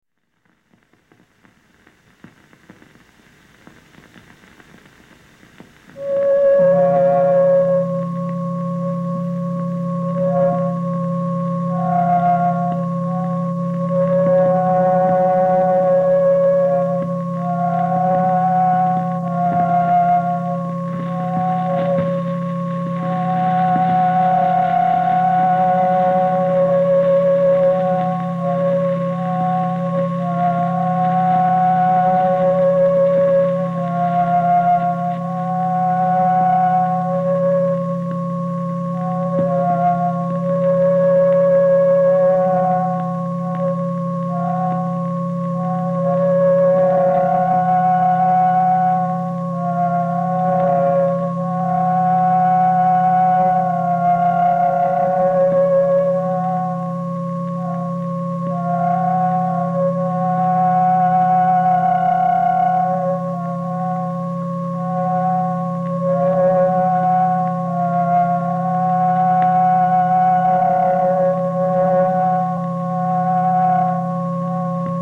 Hynningen - Hynningen - by Werner Nekes, Soundtrack Anthony Moore 1973
Fragment aus dem Soundtrack von Hynningen, 1973, einem Film von Werner Nekes, Musik von Anthony Moore.
Hynningen ist Teil von Diwan, fünf eigenständigen Filmen über Landschaft, einsame Häuser und ihre Bewohner.
Aus einer E-mail von W.Nekes:
"Also Hynningen ist der Name des
Hauses (alte Schreibweise Honungstakan = Honigdach übersetzt). Viele
allein liegende Häuser und Gehöfte tragen einen eigenen Namen, in der
Nähe sind mehrere Häuser zusammengefaßt unter dem Namen Tegen. Tegen
wird unter Sillerud erkannt und insgesamt gehört es postalisch zur
Gemeinde 67200 Årjäng, nah der Norwegischen Grenze auf der Höhe Karlstad-Oslo. beste Grüße Werner"